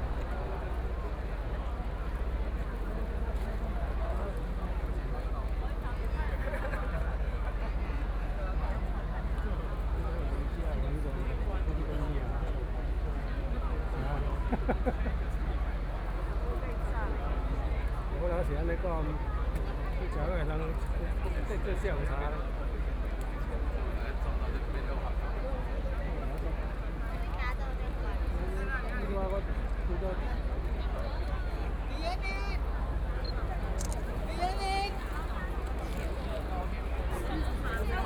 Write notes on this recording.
Walking through the site in protest, People cheering, Nearby streets are packed with all the people participating in the protest, The number of people participating in protests over Half a million, Binaural recordings, Sony PCM D100 + Soundman OKM II